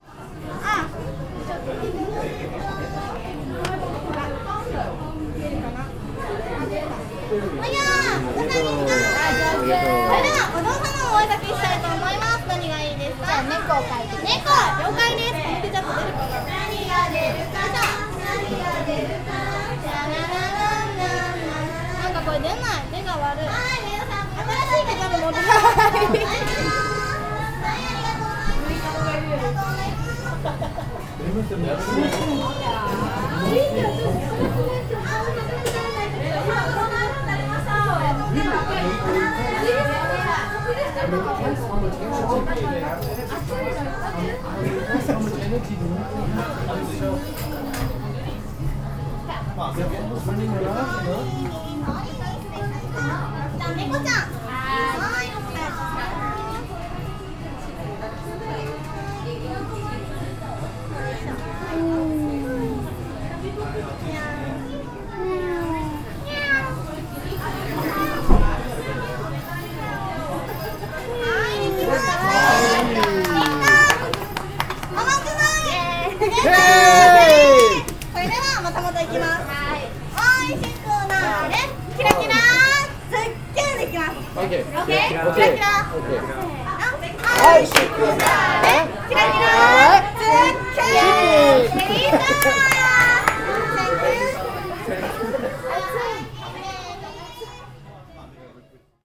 tokyo, akihabara, maid cafe
inside a maid cafe - a hysterical maid costumed girl crew treats the food and customers with magic spellings. permanent high speed background pop music.
international city scapes - social ambiences
27 July 2010, 15:00